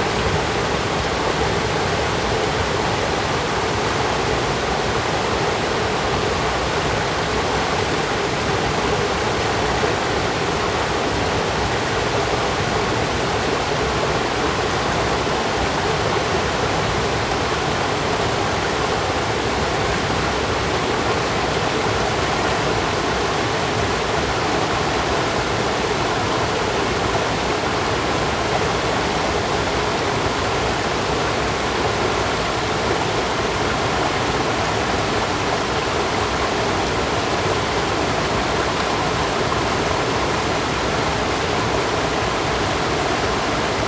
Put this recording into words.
Stream sound, Waterfall, Zoom H2n MS+ XY